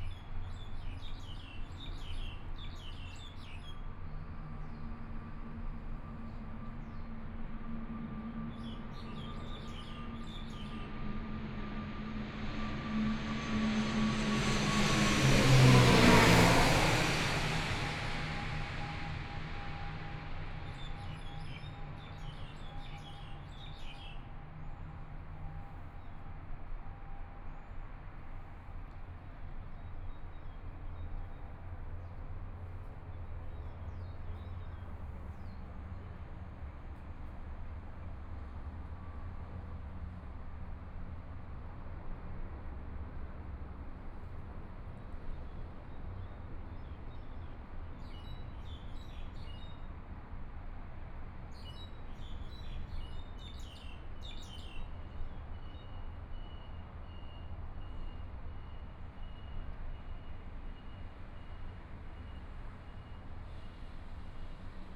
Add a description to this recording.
in the Park, Environmental sounds, Birds singing, Traffic Sound, Aircraft flying through, Tourist, Clammy cloudy, Binaural recordings, Zoom H4n+ Soundman OKM II